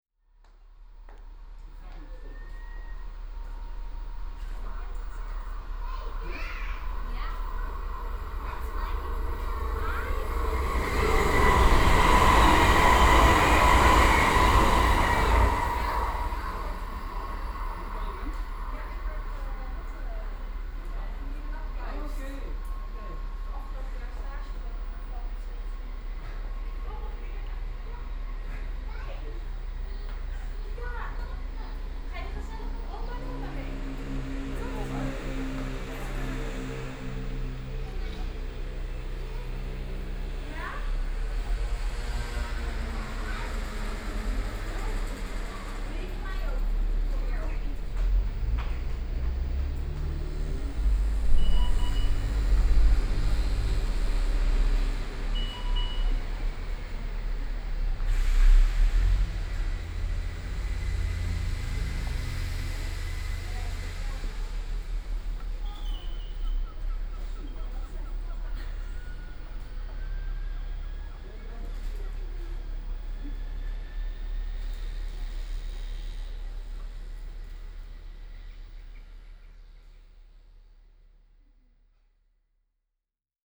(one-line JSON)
{"title": "station de Vink langsrijdende sneltrein", "date": "2011-09-03 19:06:00", "description": "langsrijdende sneltrein, brommers\nfast rapid train coming along", "latitude": "52.15", "longitude": "4.46", "altitude": "4", "timezone": "Europe/Amsterdam"}